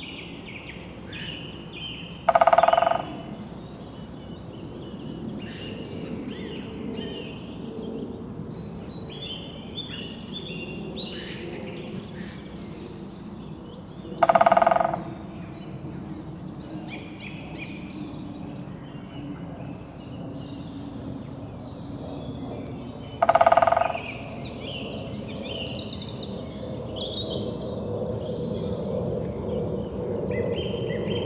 Warburg Nature Reserve - Greater Spotted Woodpecker drumming with a Jetplane
Just as I arrived in the car park at the reserve on a lovely clear but cold morning with mist in the vally, the woodpecker started his territorial pecking on an old dead oak branch 30feet above me.Sony M10 with Rode Videomic ProX.